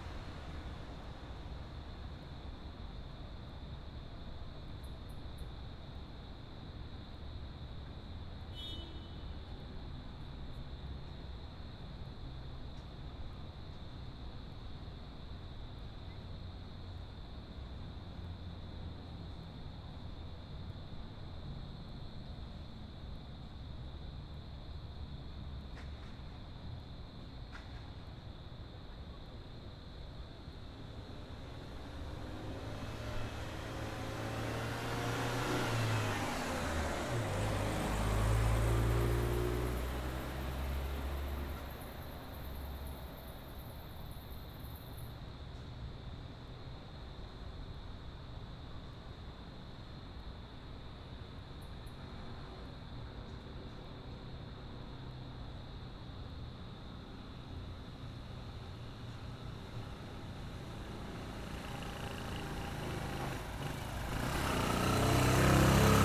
Se aprecia uno de los lugares más tranquilos de la loma en horas de la noche